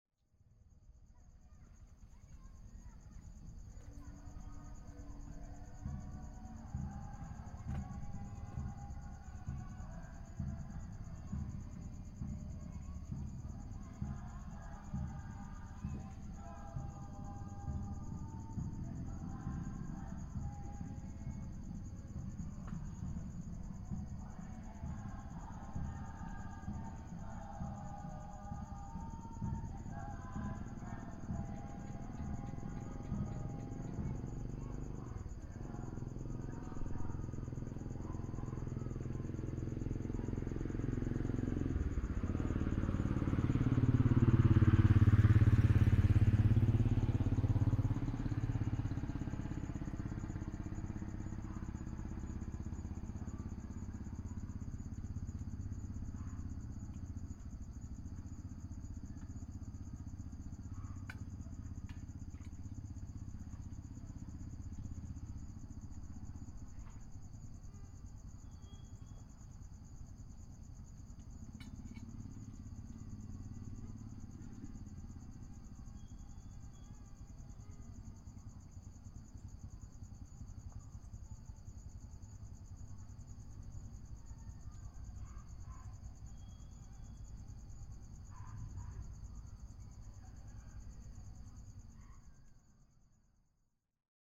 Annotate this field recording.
People singing and drumming somewhere in the village, a motorcycle passing by and sounds of cutlery on table. Recorded with a Sound Devices 702 field recorder and a modified Crown - SASS setup incorporating two Sennheiser mkh 20 microphones.